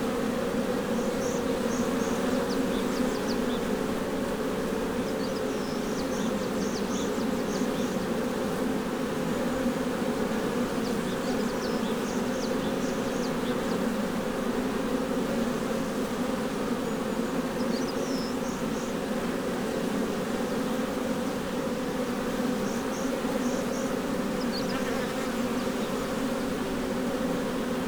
퇴골계곡 꿀벌집 속에 4월18일 into the beehive
...the bees become somewhat disturbed and defensive as the apiarist opens up the hive and removes the combs for inspection...